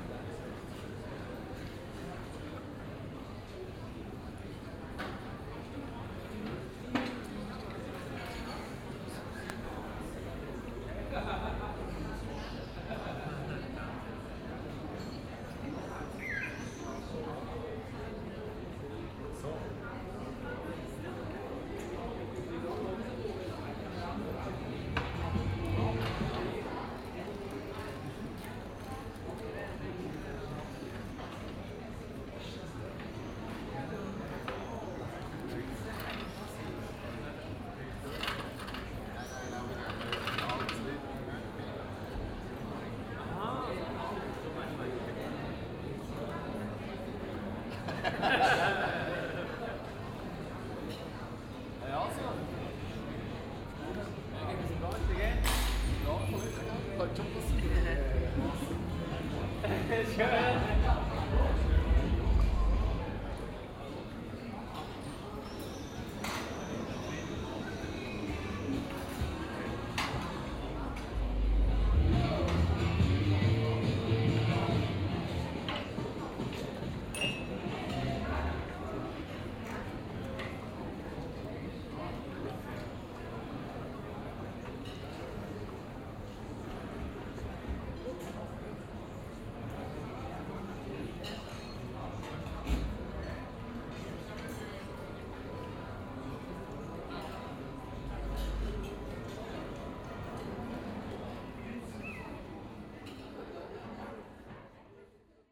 Aarau, Pelzgasse, evening, Schweiz - Pelzgasse1
Continuation of the evening walk through the quiet streets of Aarau, some talks, some water.